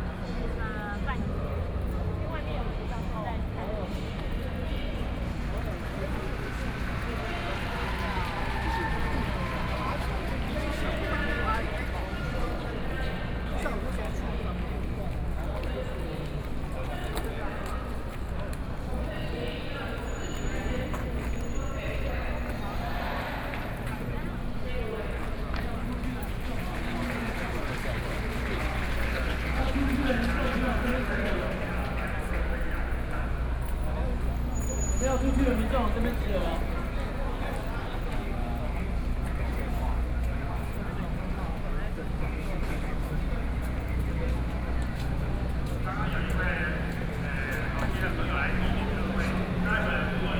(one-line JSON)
{"title": "Ministry of the Interior, Taipei City - Nonviolent occupation", "date": "2013-08-18 22:28:00", "description": "Nonviolent occupation, Zoom H4n+ Soundman OKM II", "latitude": "25.04", "longitude": "121.52", "altitude": "20", "timezone": "Asia/Taipei"}